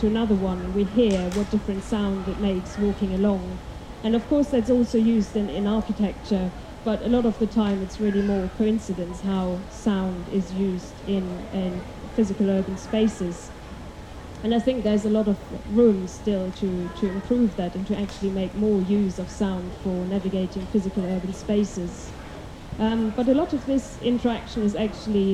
alexanderplatz, tuned city, navigating hybrid spaces
tuned city, berlin, alexanderplatz 03.07.2008, 16:15
Frauke Behrendt's talk considers how sound can be a means of engaging with hybrid spaces - layerings of physical and digital architecture - and particularly how this has been explored in art projects. Research in Sound Studies that considers mobile technology often suggests a withdrawal from public spaces. Here, the question is how we can use sound and mobile technology to engage with urban spaces.
the lectures took place outdoor on the staircase under one of the wings of teh tv tower, the speakers could only be heard by wireless headphones. this recording was made by pressing the headphone to the microphone, this way merging the ambient with the lecture.
Berlin, Deutschland, 3 July 2008, 16:15